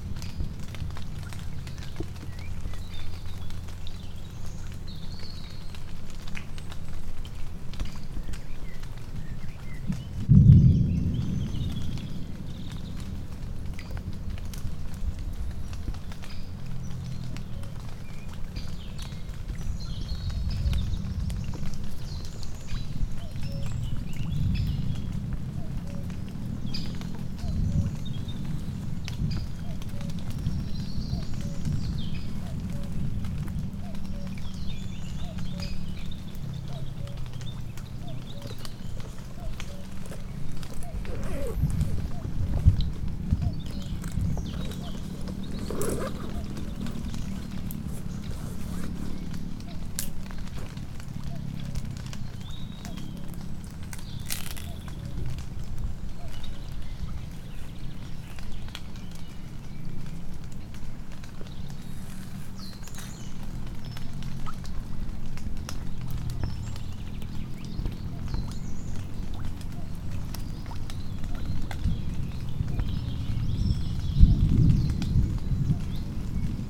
Millemont, France - Storm in forest, thousand of baby frogs around us
Recording around a lake during a storm. We observed during the recording thousand of baby frog around us.
made by Martiño y Madeleine
28 mai 2018 14h34
recorded with PCM D-100